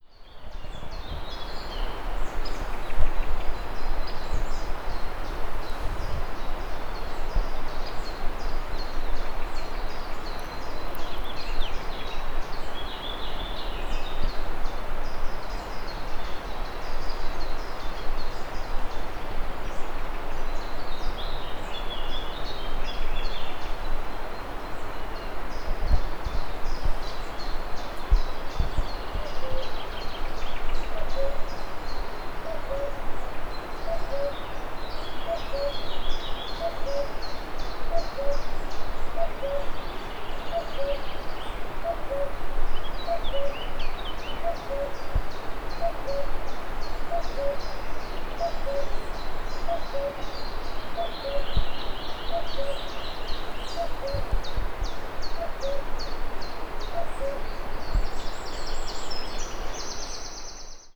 Ambiente naturale delle Antiche Rogge (sentiero storico-naturalistico): uccelli vari, verso del cuculo, torrente Cordevole nello sfondo.